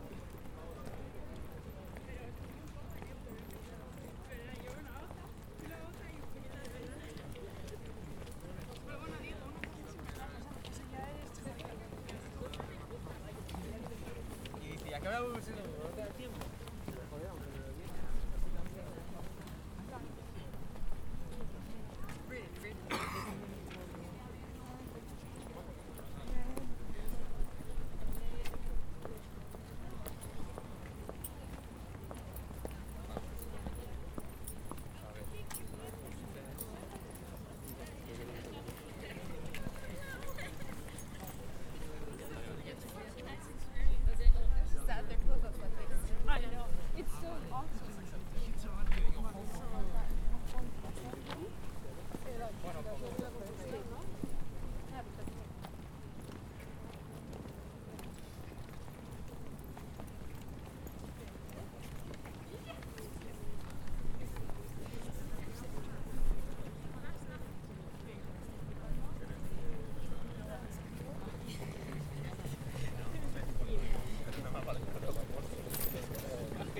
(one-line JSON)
{"title": "Calle Francisco Tomás y Valiente, Madrid, España - Francisco Tomás y Valiente Street", "date": "2018-12-10 10:25:00", "description": "The Street has two roads. In the center there are trees and stone benches. I sit down on one bench and switch on the microphone. People moves around me. It can be heard steps and conversations. Men and women voices. One bicycle pass. There are two girls speaking English. Someone coughs. One man is dragging a trolley in the way to the station. Another bicycle: this time the wheels sound can be heard. Someone dragging other trolley. Steps of rubber boots.\nRecorded with a Zoom H4n", "latitude": "40.54", "longitude": "-3.70", "altitude": "728", "timezone": "Europe/Madrid"}